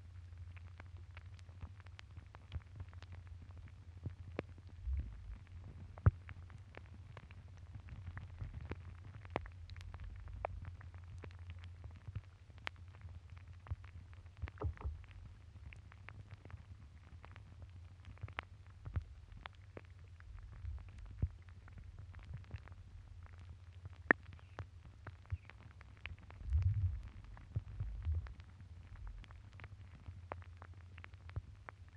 Ice Recording 05
Hydrophone recording in ditch of frozen water